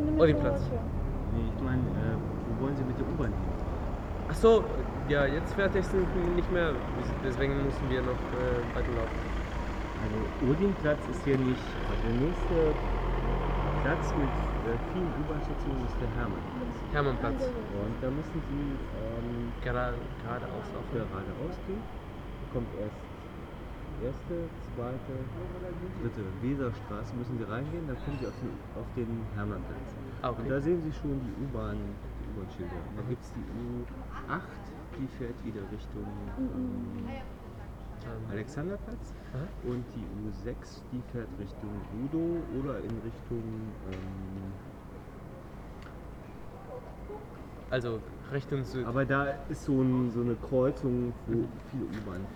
Berlin: Vermessungspunkt Maybachufer / Bürknerstraße - Klangvermessung Kreuzkölln ::: 02.07.2010 ::: 01:45
Berlin, Germany